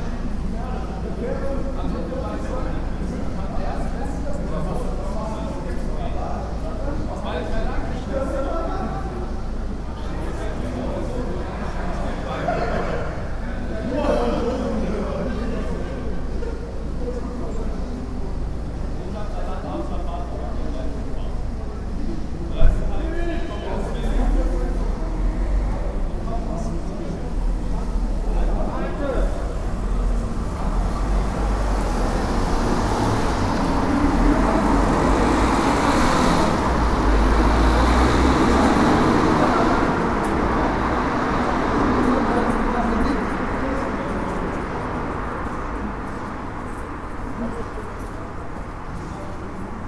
Steele, Essen, Deutschland - grendplatz nachts
essen-steele: grendplatz nachts
Essen, Germany, 26 October 2014, 02:20